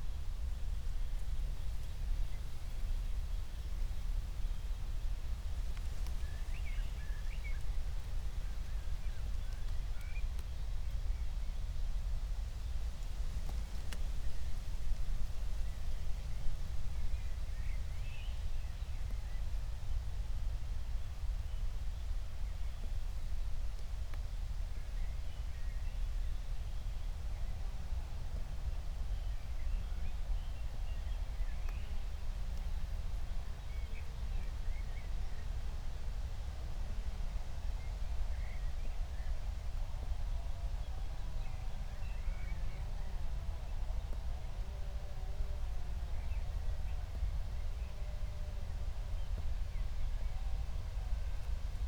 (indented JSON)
{
  "title": "Berlin, Buch, Mittelbruch / Torfstich - wetland, nature reserve",
  "date": "2020-06-19 14:00:00",
  "description": "14:00 Berlin, Buch, Mittelbruch / Torfstich 1",
  "latitude": "52.65",
  "longitude": "13.50",
  "altitude": "55",
  "timezone": "Europe/Berlin"
}